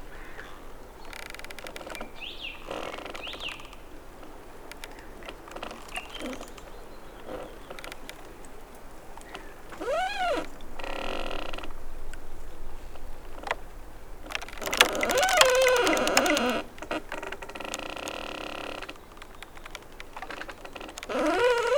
Huminakuja, Oulu, Finland - Damaged tree swaying in the wind
A damaged tree swaying in the wind next to the park at Meri-Toppila. Recorded with Zoom H5 with the default X/Y capsule. Wind rumble removed in post.
Manner-Suomi, Suomi, 15 May 2020